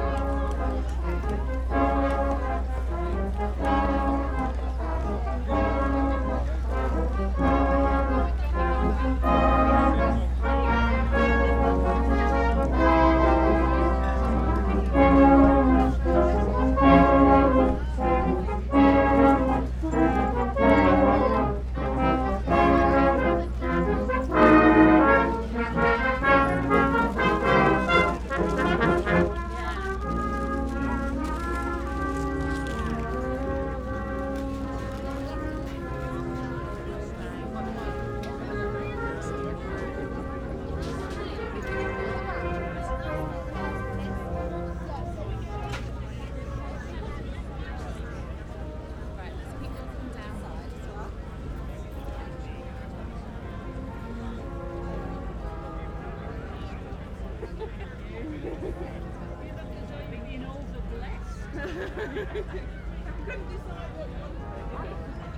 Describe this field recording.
Walking past the band stand ... open lavalier mics clipped to baseball cap ...